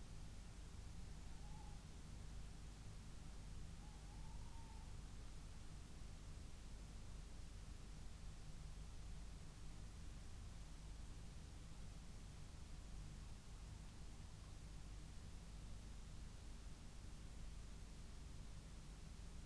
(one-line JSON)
{"title": "Luttons, UK - tawny owls and thunderstorm ...", "date": "2020-05-10 01:40:00", "description": "tawny owls calls and approaching thunderstorm ... xlr SASS on tripod to Zoom H5 ... bird calls ... pheasant ... little owl ... red-legged partridge ... there is clipping ... unattended recording ... first real thunderclap at 17:20 ... still don't know why low level rumbles set the pheasants calling ... not one or two ... most of them ... 0", "latitude": "54.12", "longitude": "-0.54", "altitude": "76", "timezone": "Europe/London"}